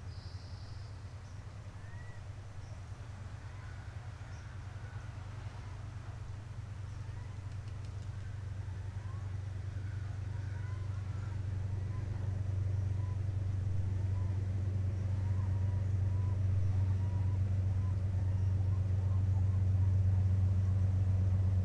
Haines Wharf Park, Edmonds, WA - Train #4: Haines Wharf
Halfway between the Edmonds train depot and Picnic Point, we stop at tiny Haines Wharf Park, which is the only public access to the railroad tracks in the high-priced real estate along the waterfront -- albeit fenced-off, long-abandoned, and posted "No Trespassing." We wait until a northbound freight rumbles past with nary a wave. The whole time I was waiting an unmarked security guard waited in his idling car behind me.